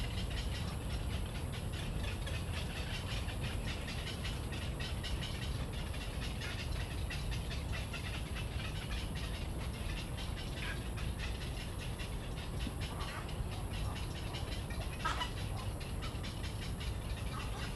{
  "title": "大安森林公園, 大安區 Taipei City - Bird calls",
  "date": "2015-06-28 19:47:00",
  "description": "Bird calls, in the Park, Traffic noise, Ecological pool\nZoom H2n MS+XY",
  "latitude": "25.03",
  "longitude": "121.54",
  "altitude": "8",
  "timezone": "Asia/Taipei"
}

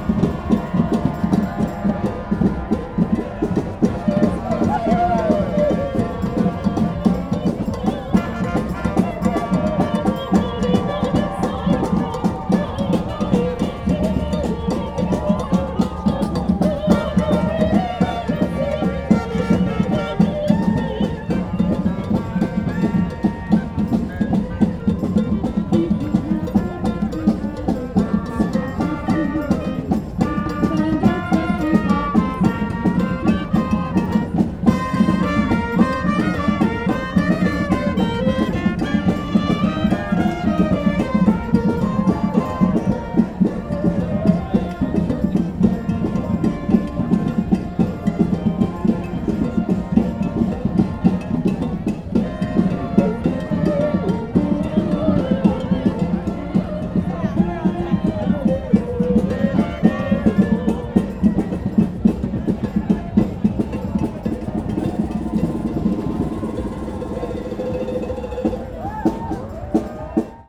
{
  "title": "Regent St, Marylebone, London, UK - Extinction Rebellion: Ode to Joy band and opera singers",
  "date": "2019-04-12 18:31:00",
  "description": "Extinction rebellion fashion show. Blocked from traffic, two pink carpets were rolled out across Oxford Circus for a colourful imaginative fashion show, while the band and opera singer belted out Ode to Joy and other tunes.",
  "latitude": "51.52",
  "longitude": "-0.14",
  "altitude": "28",
  "timezone": "Europe/London"
}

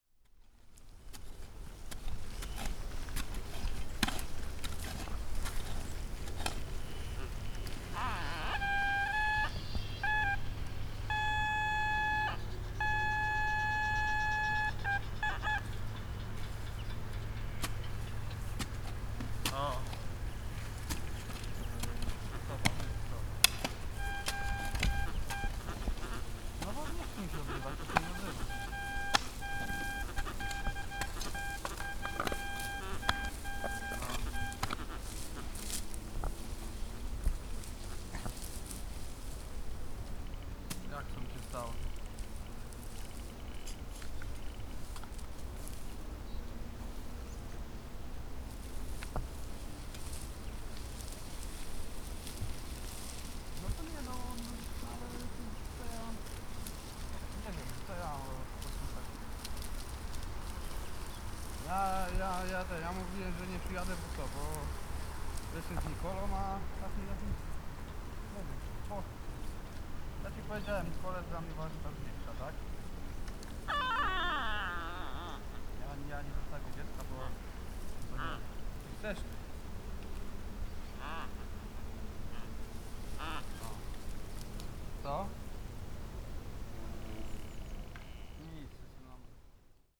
{
  "title": "Morasko Nature Reserve - metal detector",
  "date": "2015-10-04 10:16:00",
  "description": "two guys operating metal detectors on a field. one talking on the phone. i pretty much spooked them when i walked out of the forest with a recorder in my hand. but still was able to record some of the cosmic sounds of the detector. (sony d50)",
  "latitude": "52.49",
  "longitude": "16.90",
  "altitude": "137",
  "timezone": "Europe/Warsaw"
}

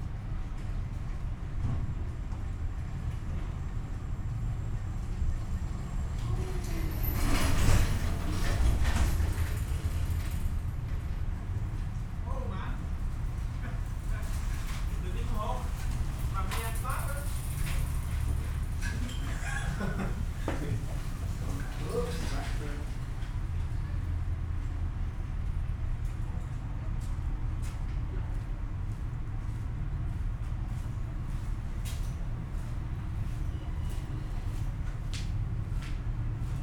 {
  "title": "Treptower Park, Jugendinsel, Berlin - passage below pedestrian bridge",
  "date": "2014-10-18 12:35:00",
  "description": "Berlin Treptower Park, narrow passage below pedestrian bridge, people and tourist boat passing-by.\n(SD702, DPA4060)",
  "latitude": "52.49",
  "longitude": "13.48",
  "altitude": "37",
  "timezone": "Europe/Berlin"
}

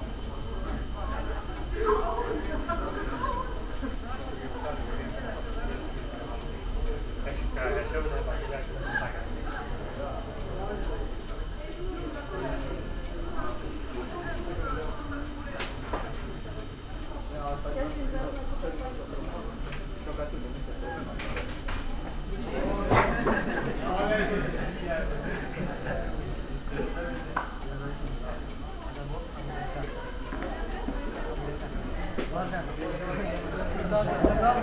{
  "title": "clockmaker, gosposka ulica, maribor - open doors",
  "date": "2014-04-04 13:50:00",
  "description": "inside and street side merge",
  "latitude": "46.56",
  "longitude": "15.65",
  "altitude": "274",
  "timezone": "Europe/Ljubljana"
}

